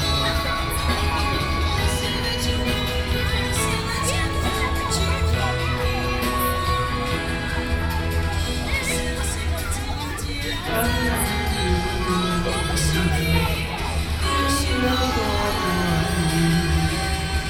Beitou, Taipei City - Community party
Community party, Children playing in the park, Park next evening activities, Sony PCM D50 + Soundman OKM II
Taipei City, Taiwan, 2 September